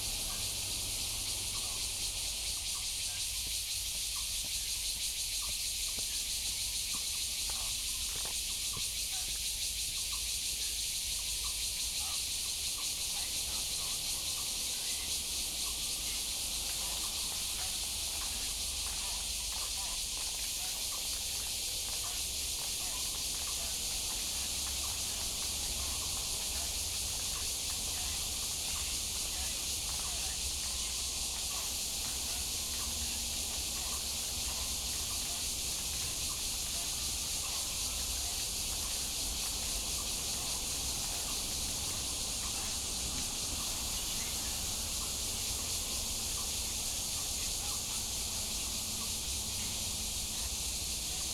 Many elderly people doing exercise in the park, Bird calls, Cicadas cry, Traffic Sound